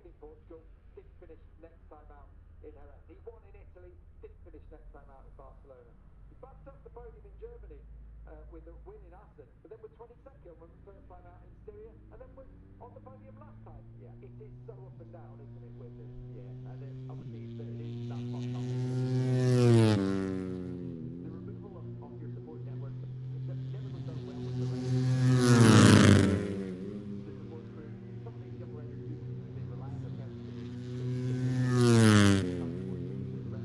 moto three free practice one ... maggotts ... olympus ls 14 integral mics ...